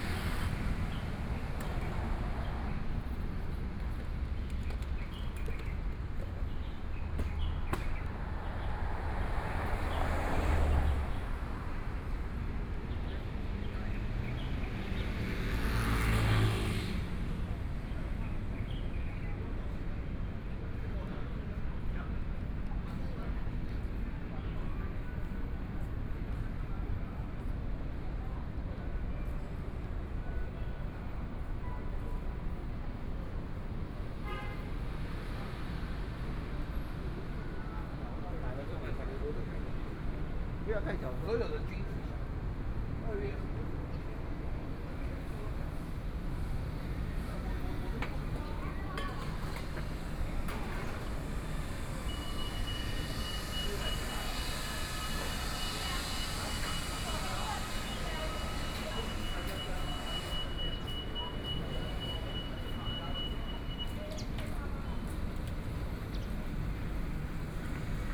中山區林森公園, Taipei City - soundwalk
Walking through the park from the corner, Traffic Sound, Walking towards the north direction
Zhongshan District, Taipei City, Taiwan, 3 April 2014, ~11:00